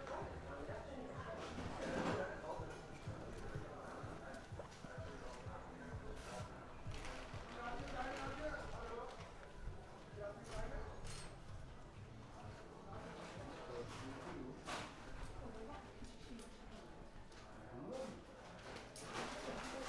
walking around the flea market. rich blend of sounds living in this unusual place. conversations fade in and out. many objects on display are mechanical or electrical emitting strange noises. some needed to be manipulated to squeeze out a sound. turkish pop music, radio and tv broadcasts blasting from old, cheap tv and radio transmitters. shouts of the sellers. i felt like riding a boat on the amazon and listening to the sounds of the flee market jungle. endless journey.
Berlin, Germany, 9 December 2012, ~16:00